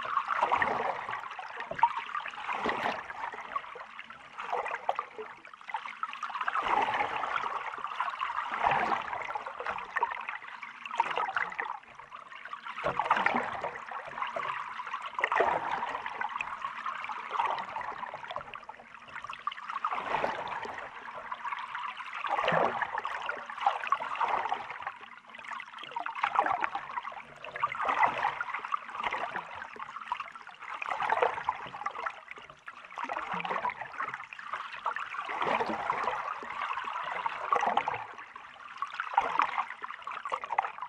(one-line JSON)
{"title": "nida pier hydrophone under water - Nida pier hydrophone under water", "date": "2008-10-22 00:31:00", "description": "Recorded in Lithuania in October 2008.", "latitude": "55.30", "longitude": "21.00", "altitude": "1", "timezone": "Europe/Berlin"}